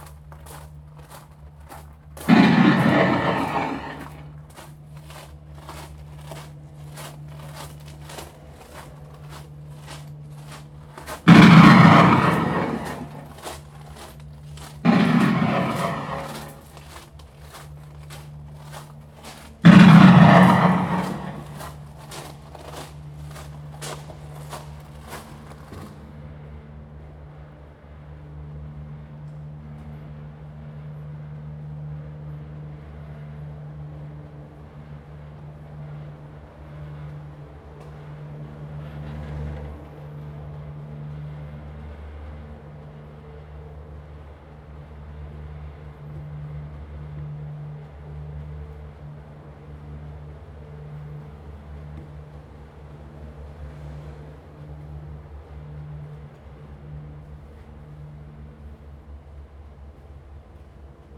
walking in the Underground tunnels, Abandoned military facilities
Zoom H2n MS +XY
金門縣 (Kinmen), 福建省, Mainland - Taiwan Border, 4 November 2014